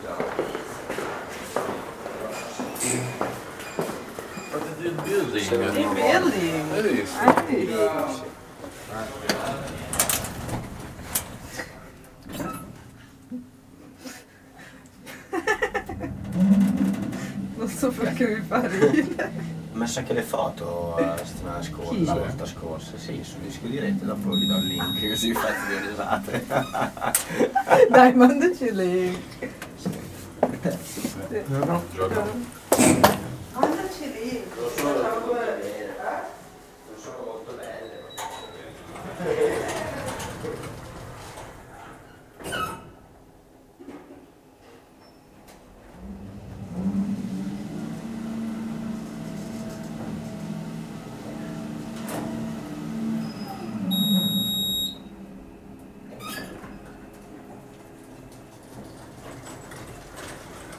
Milano, Italy - Entering the offices
entering the Offices: from street, into the main hall, crossing the cafeteria, and then into the elevators
November 2012